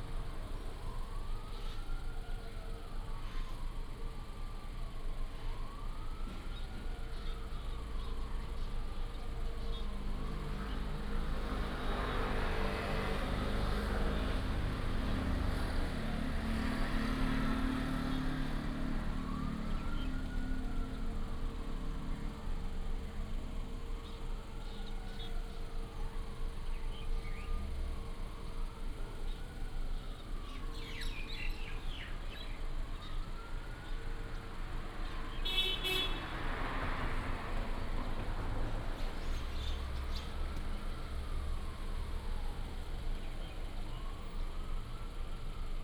南平路二段508號, Pingzhen Dist., Taoyuan City - PARKING LOT
In the convenience store parking lot, The sound of birds, Traffic sound, Fire engines pass